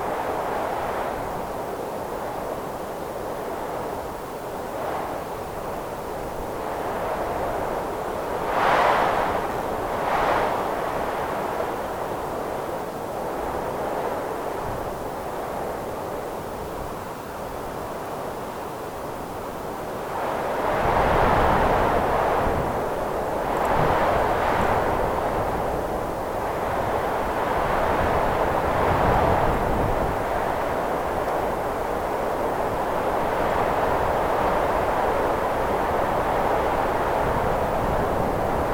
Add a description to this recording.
Wind is gelid and powerful, during a winterly break.